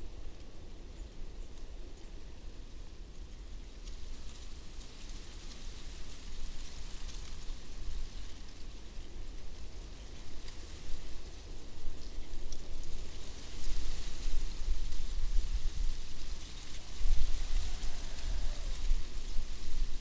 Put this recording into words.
oak grove, branches with dry leaves, wind, rooster